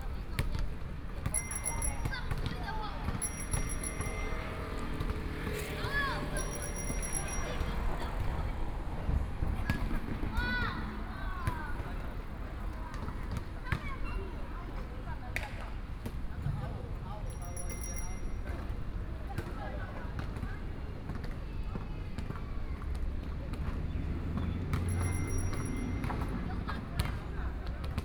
Dayong Rd., Yancheng Dist. - At the roadside
At the roadside, Play basketball, The pedestrian, Traffic Sound
Kaohsiung City, Taiwan